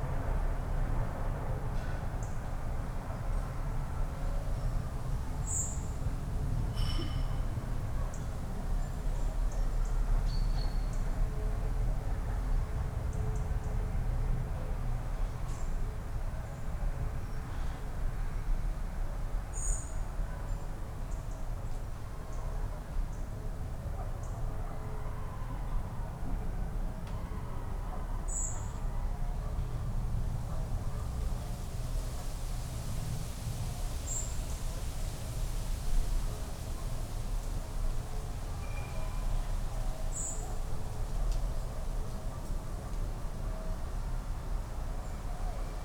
Frohnhausen, Essen, Deutschland - backyard ambience
Essen, backyard evening ambience
(Sony PCM D50, DPA4060)
Essen, Germany, 12 October 2014